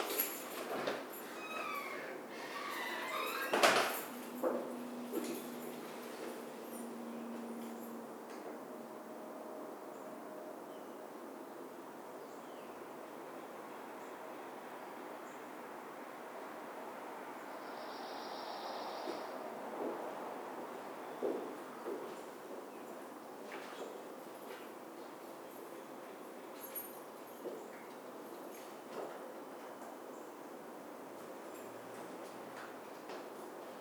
Suffex Green Ln NW, Atlanta, GA, USA - Entrance Hall Ambience
A recording made under a set of stairs in the entrance hall of an apartment complex. You can hear people walking past the recorder, the slamming of doors, keys jingling, etc. The recording was made with the onboard stereo mics of a Tascam Dr-22WL, a mini tripod and a "dead cat" windscreen. A low cut was applied to cut out the rumble of footsteps and nearby traffic.
5 February 2019, ~17:00